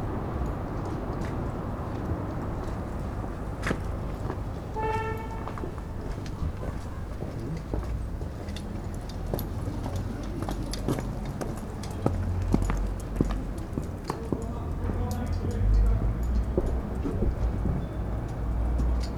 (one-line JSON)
{"title": "Berlin: Vermessungspunkt Friedelstraße / Maybachufer - Klangvermessung Kreuzkölln ::: 31.10.2013 ::: 23:50", "date": "2013-10-31 23:50:00", "latitude": "52.49", "longitude": "13.43", "altitude": "39", "timezone": "Europe/Berlin"}